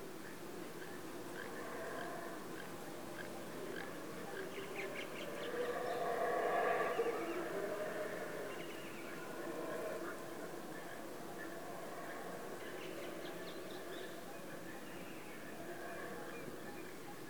Peten, Guatemala - Howler monkeys in El Mirador